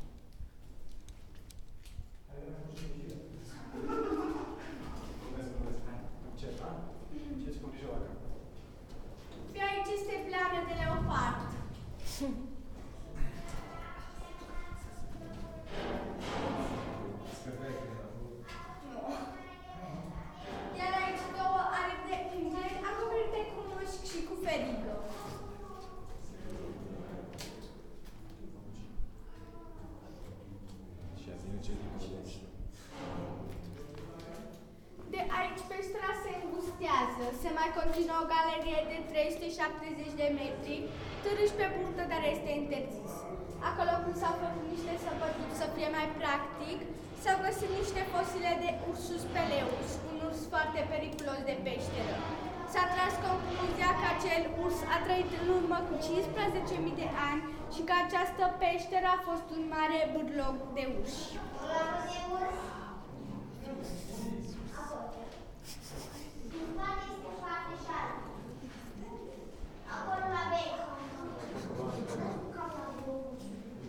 Visit to the Dambovicioara Cave, led by a 14 yr old girl.
Romania, June 2011